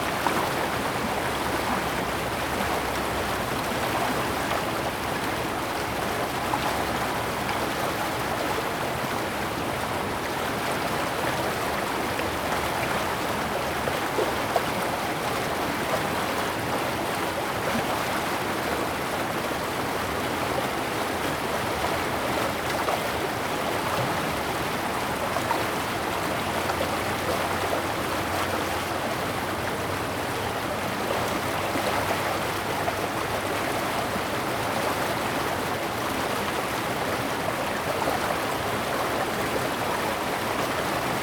溪底田, Taimali Township, Taitung County - Water sound
Agricultural irrigation channel, Water sound
Zoom H2n MS+ XY